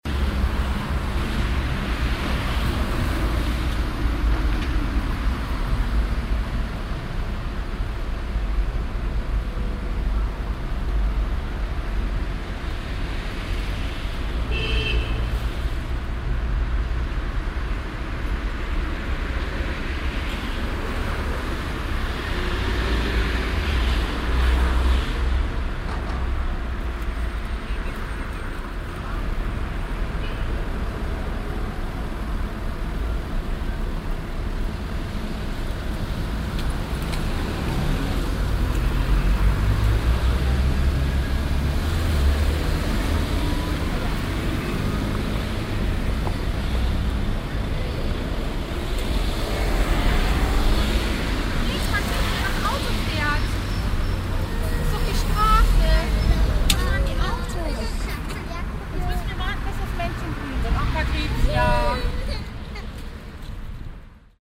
mettmann, jubiläumsplatz, straßenkreuzung - mettmann, jubilaeumsplatz, straßenkreuzung
verkehrserziehung an einer grossen straßenkreuzung, mittags
project: : resonanzen - neanderland - social ambiences/ listen to the people - in & outdoor nearfield recordings